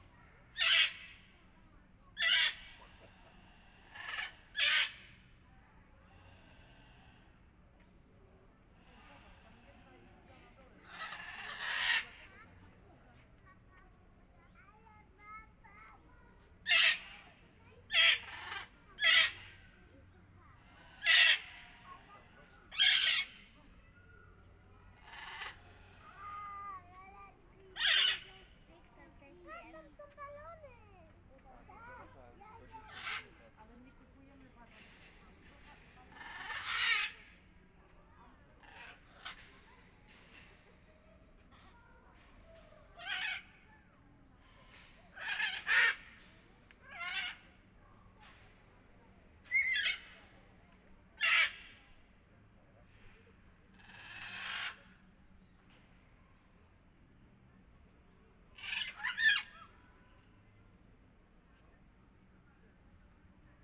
ZOO, Chorzów, Poland - (820 BI) Parrots
Binaural recording of parrots in Chorzów ZOO.
Recorded with DPA 4560 on Sound Devices MixPre6 II.
Górnośląsko-Zagłębiowska Metropolia, województwo śląskie, Polska, 26 June, 14:48